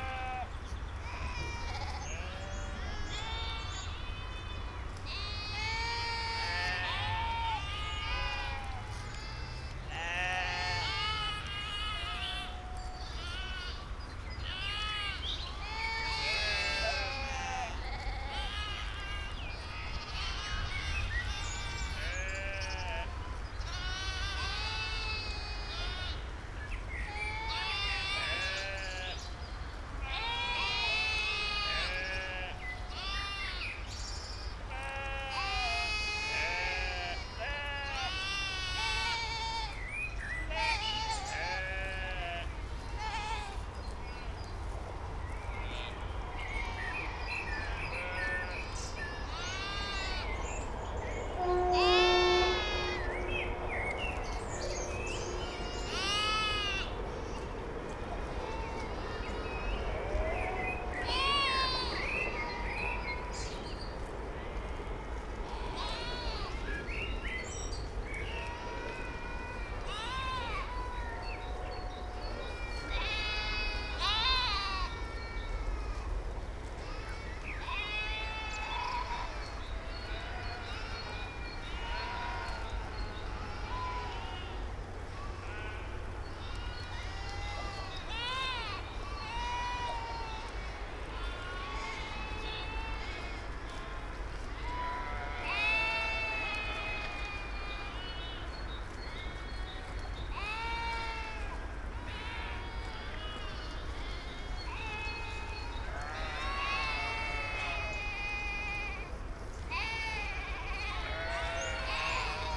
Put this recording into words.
Köln, Riehl, Riehler Aue, meadow along river Rhein, a flock of sheep, (Sony PCM D50, Primo EM172)